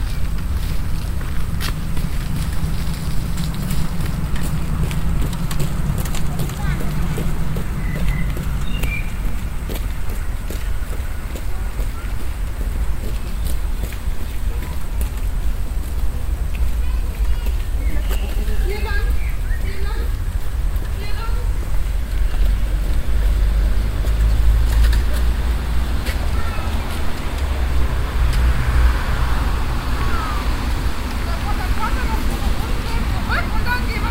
cologne, stadtgarten, soundmap, hauptweg, ende
stereofeldaufnahmen im september 07 mittags
project: klang raum garten/ sound in public spaces - in & outdoor nearfield recordings
hauptweg, ende gilbachstrasse